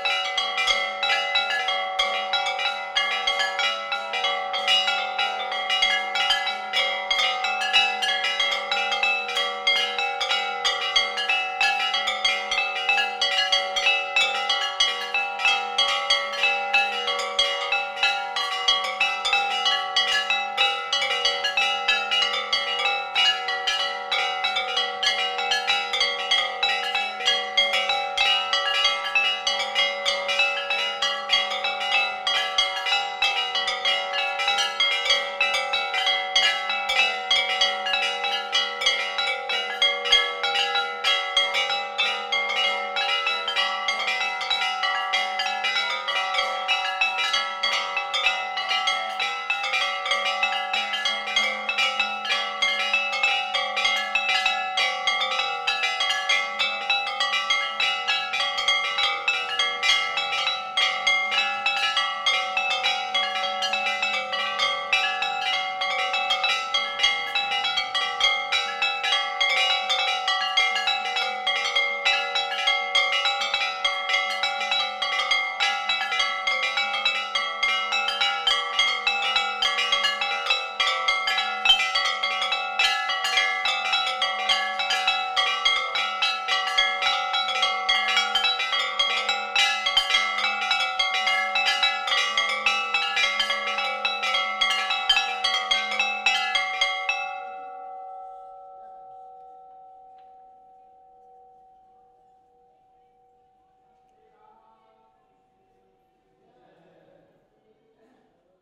Laxman Jhula, Rishikesh, Uttarakhand, Inde - Rishikesh - Trayambakeshwar, Om Shiv Pooja bhandar
Rishikesh
Trayambakeshwar, Om Shiv Pooja bhandar
Ambiance